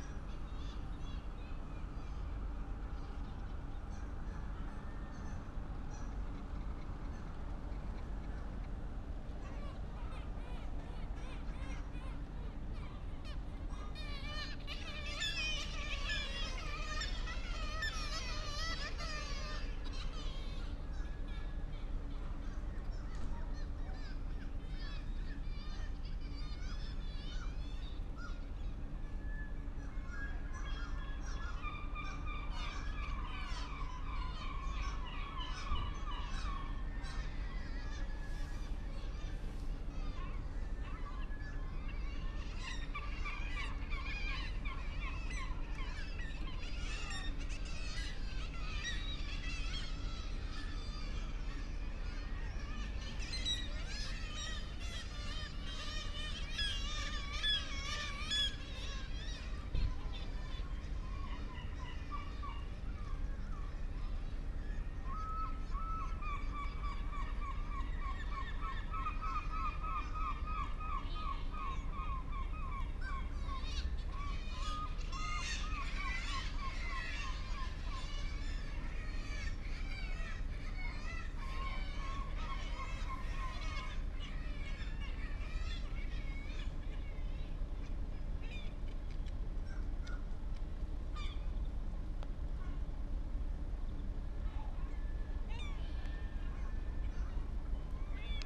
Recorded with Zoom H6 earworm 3 microphone and dummyhead, use headphones